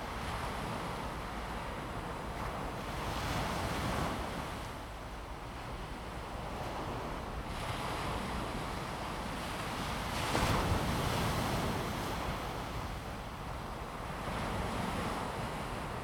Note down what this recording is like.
Sound of the waves, Late night at the seaside, Zoom H2n MS+XY